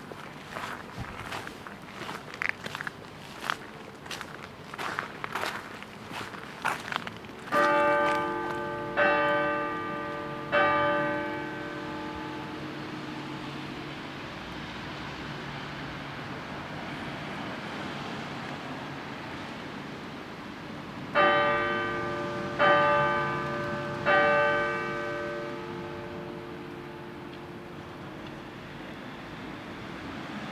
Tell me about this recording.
There was a sound and light system on the facade of the cathedral so I walked behind to avoid the amplified music, and I stopped walking when the bells started to ring, I was enough far away from the music. Tech Note : Sony PCM-D100 internal microphones, wide position.